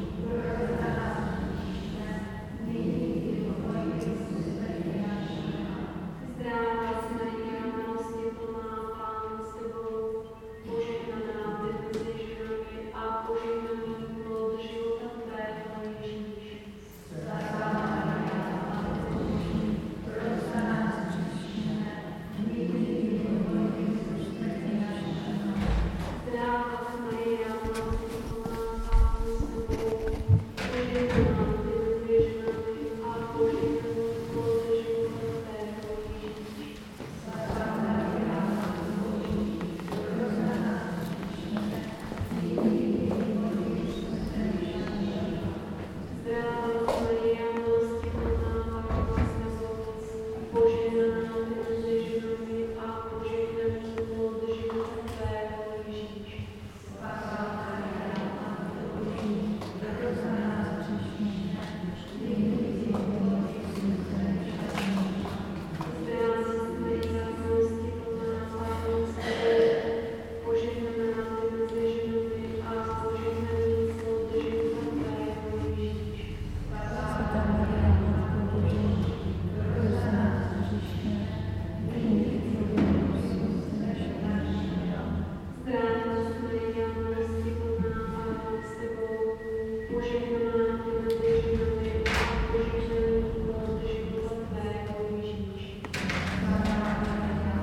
Sv. Bartolomej Prayers - Sv. Bartolomej
Prayer at the 11:30 am Mass Service of an April Saturday